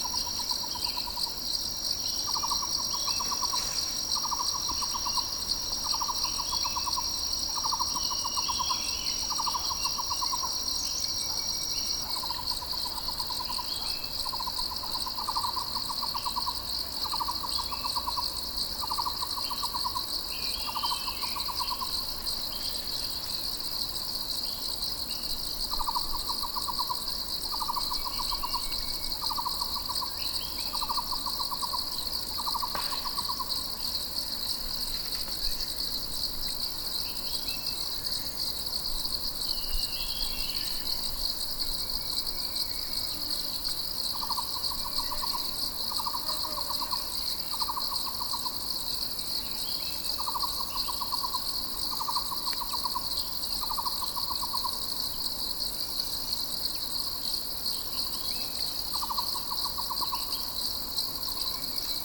Changjiang, Hainan, China - Tropical birds in the morning
Morning at the roadside in the Bawangling Forest Reserve.
Recorded on Sony PCM-M10 with built-in microphones.
Hainan Sheng, China, 4 April, ~09:00